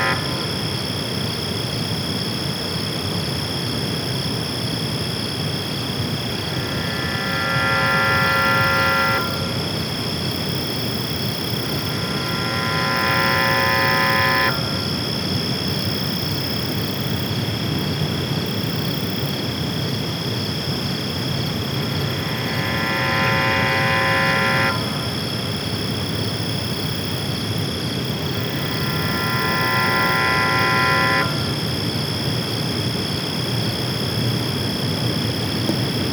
Lakeshore Ave, Toronto, ON, Canada - Mystery alarm?
Mysterious alarm-like sound emanating from a water processing plant. Also crickets and waves.